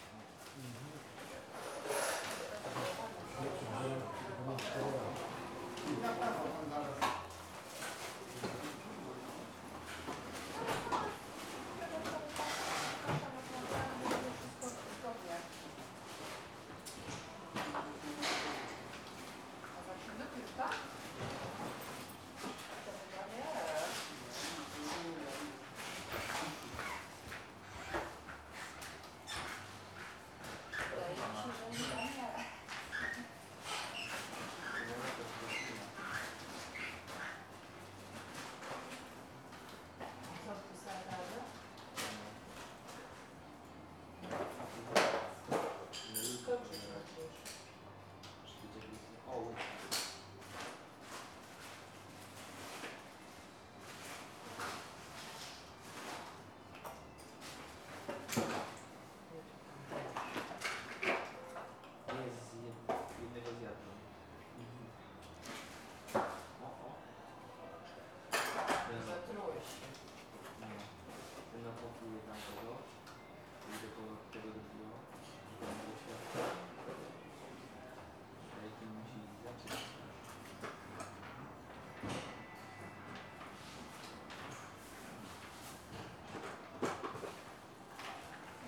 {"title": "Poznan, Lazarz district, MRI clinic - waiting room", "date": "2014-06-18 12:25:00", "description": "small waiting room of a MRI clinic. repairman packing their tools, patients making appointments at the desk, conversations of receptionists.", "latitude": "52.41", "longitude": "16.90", "altitude": "93", "timezone": "Europe/Warsaw"}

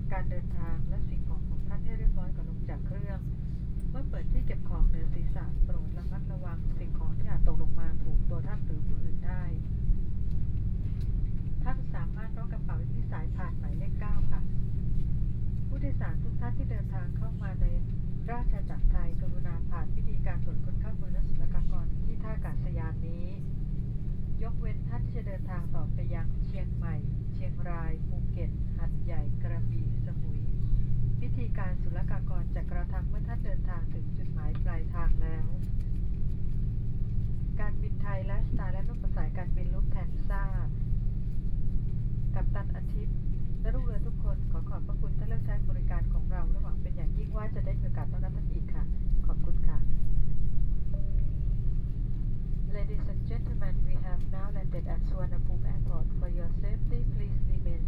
{"title": "素萬那普機場, Bangkok - Broadcast", "date": "2014-05-06 10:50:00", "description": "Broadcast cabin after the plane landed", "latitude": "13.69", "longitude": "100.75", "altitude": "3", "timezone": "Asia/Bangkok"}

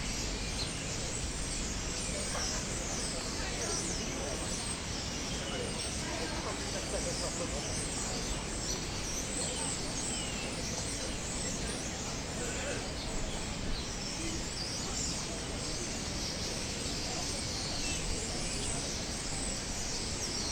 {"title": "migratory birds @ Frankfurt Hauptwache", "date": "2009-09-27 19:16:00", "description": "Hundreds (thousands???) of migratory birds assembling in the sycamore trees before they take off to their winter residence. Recorded at Hauptwache, maybe one of the ugliest, highly frequented places downtown Frankfurt. You\ne also going to hear some funny pedestrians comments...", "latitude": "50.11", "longitude": "8.68", "altitude": "108", "timezone": "Europe/Berlin"}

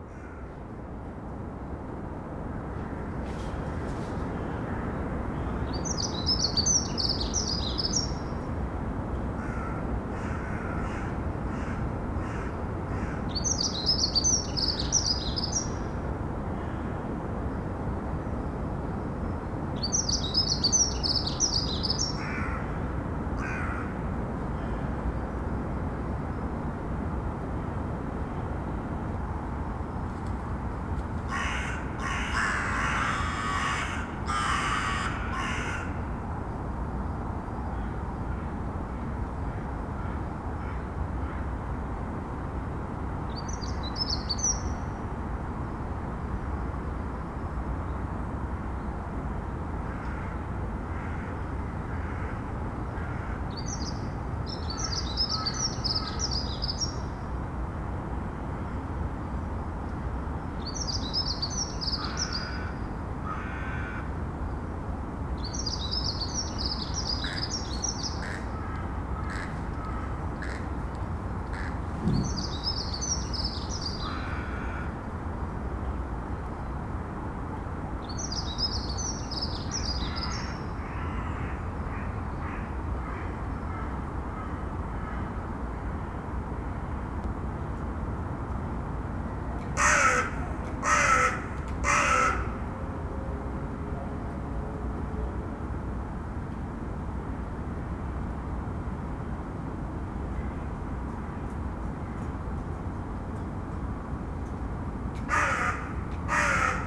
{"title": "Seraing, Belgique - Abandoned factory", "date": "2017-03-12 10:00:00", "description": "Recorder left alone on the top of the furnaces of this abandoned coke plant. Everything is rusted and very old. General ambiance of the plant, with distant calls from the crows.", "latitude": "50.61", "longitude": "5.53", "altitude": "69", "timezone": "Europe/Brussels"}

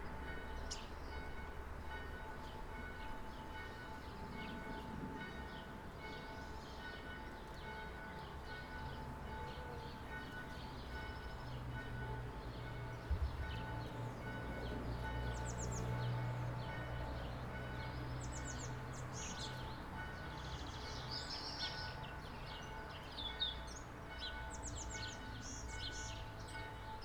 {"title": "Bonaforth, Deutschland - BonaforthSamstagMittag", "date": "2014-04-05 12:00:00", "description": "Bonaforth, village, Saturday, 12am, siren's wailing, church bell, Rode NT4, Fostex FR2", "latitude": "51.40", "longitude": "9.63", "altitude": "135", "timezone": "Europe/Berlin"}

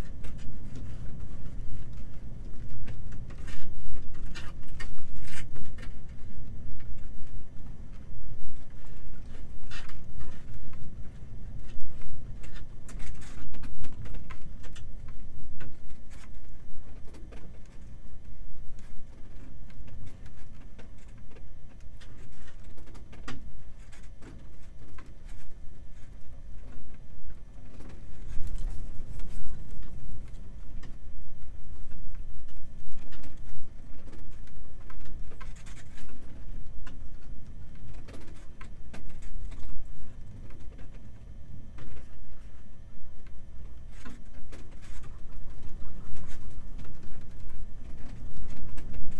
{"title": "abandoned cabin, Utena, Lithuania", "date": "2019-03-24 15:20:00", "description": "theres's some abandoned, rusty cabin in a meadow...some part from soviet times bus. windy day. I placed my omni mics inside and electromagnetris antenna Priezor outside.", "latitude": "55.53", "longitude": "25.65", "altitude": "133", "timezone": "Europe/Vilnius"}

